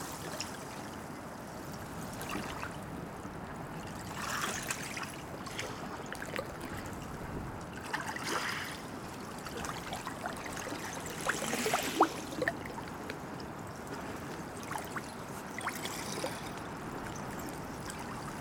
Ploumanach, Port, France - Waves carryings a bunch of Sea Shells
Dans un coin du port, des petites vagues ont ramassés des coquillages.
Waves carryings a bunch of Sea Shells in an enclosure of the port.
/Oktava mk012 ORTF & SD mixpre & Zoom h4n
Perros-Guirec, France, 19 March